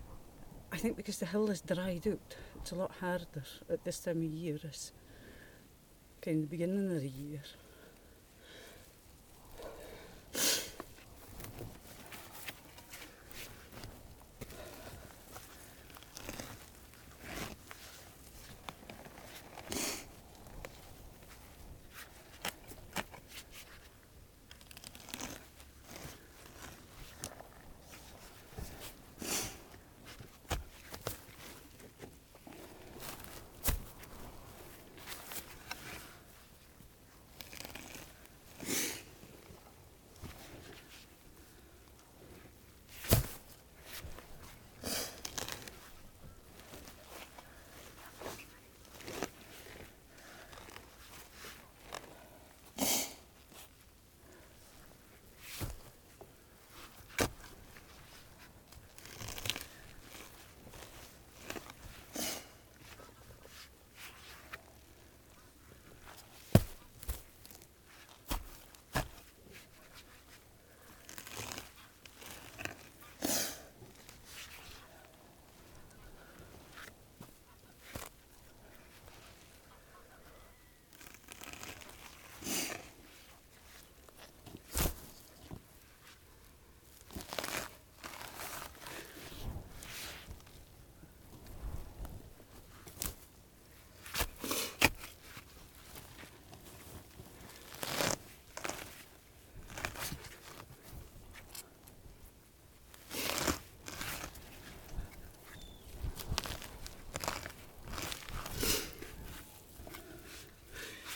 Laurie's peat bank, between Blackton and Hestinsetter, Shetland Islands, UK - Ingrid cutting peat from Laurie's peat bank
All over Shetland people still have peat cutting rights. If you look at the satellite image of this landscape, you can see dark lines running off the track; these are strips of land which have been cut back to reveal the young coal beneath. This is annually harvested in small quantities and used as a domestic fuel to heat the home throughout winter. There are many historic images of Shetland women walking with large keshies on their backs, filled with cut peats, and knitting as they walk; I was interested in listening to the labour associated with the peat harvest, and Laurie's mother, Ingrid, kindly agreed to cut some peat for me so I could hear how this work sounds. This is the wrong time of year to cut peat, as the ground is dry. Normally the work is done in May, when the winter rains have wet the earth through, and when the birds are very much noisier than they are here in this recording!
August 2013